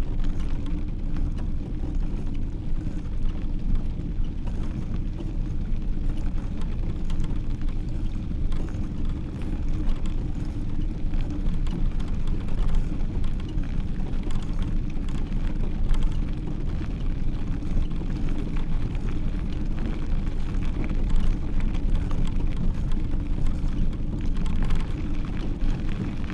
neoscenes: cycling around the munitions bunkers
UT, USA, 16 April 2010